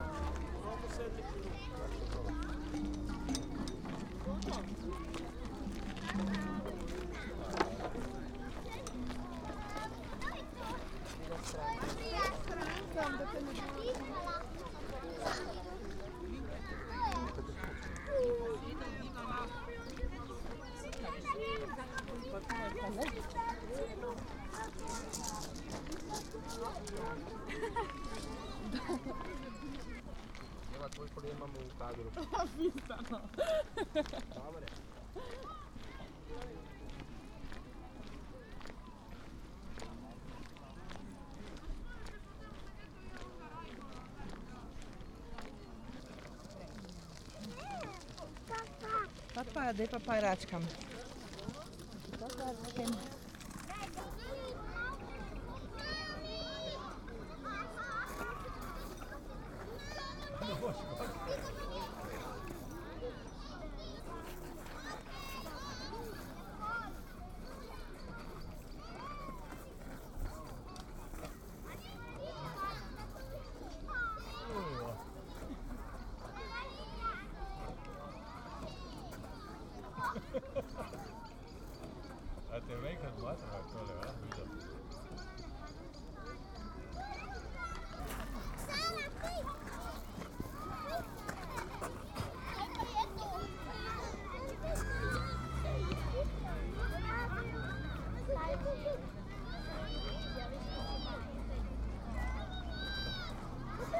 Koseški bajer, Ljubljana, Slovenija - Otroško igrišče zraven Koseškega bajerja
Lep, sončen dan, otroška igra. Posneto s Sony PCM-M10